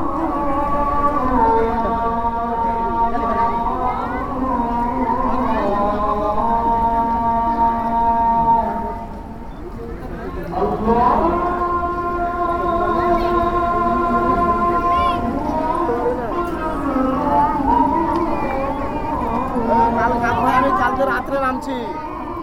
Hadj Ali Mosque, Mumbai, Maharashtra, Inde - Hadj Ali